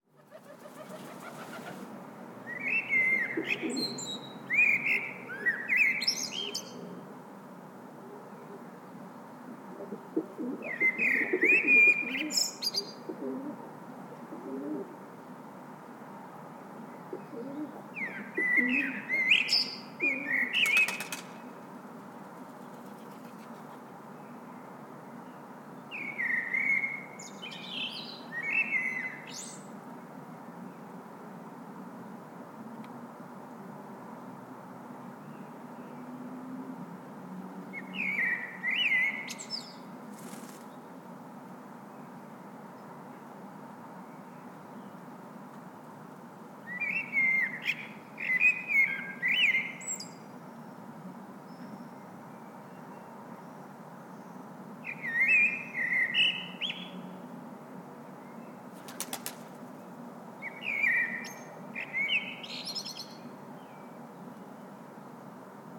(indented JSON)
{
  "title": "Former radiofonia studio, Kraków, Poland - (123 ORTF) Blackbird and Pigeon",
  "date": "2017-05-10 15:17:00",
  "description": "Stereo recording made from a window of a former radiofonia studio. Blackbird singing with some sound from a pigeon and wings flapping.\nRecorded with Soundman OKM on Sony PCM D100",
  "latitude": "50.04",
  "longitude": "19.94",
  "altitude": "204",
  "timezone": "Europe/Warsaw"
}